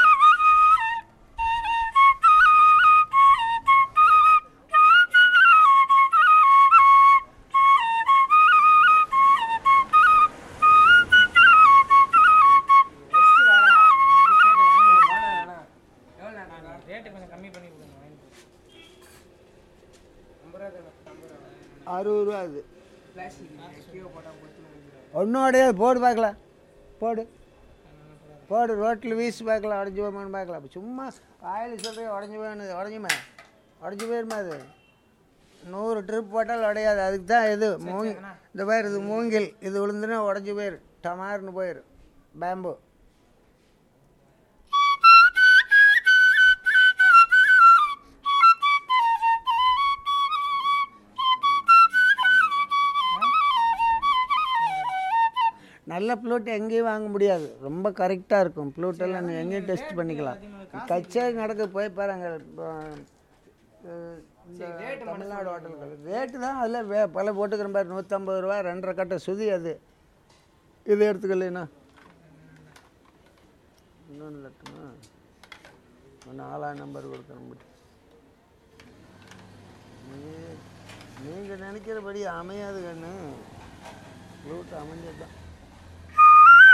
Fisherman Colony, Mahabalipuram, Tamil Nadu, Inde - Mamallapuram - Le vendeur de flûtes
Mamallapuram - Le vendeur de flûtes